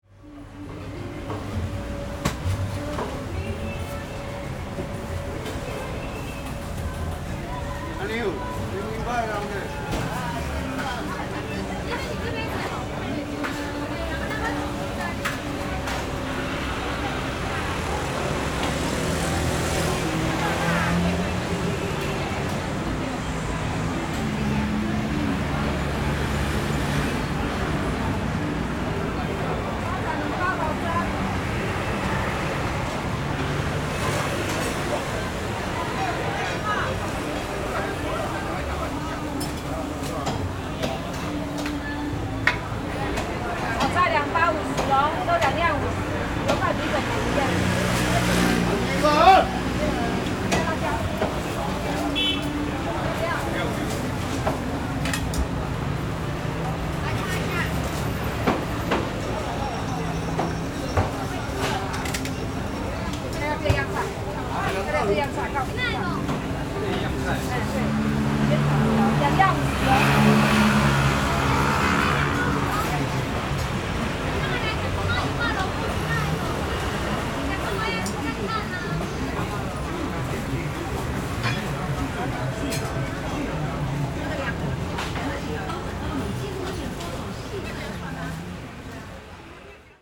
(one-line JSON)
{"title": "Sec., Ankang Rd., Xindian Dist., New Taipei City - In the market entrance", "date": "2012-01-18 17:15:00", "description": "In the market entrance, Traffic Sound\nZoom H4n+ Rode NT4", "latitude": "24.96", "longitude": "121.50", "altitude": "25", "timezone": "Asia/Taipei"}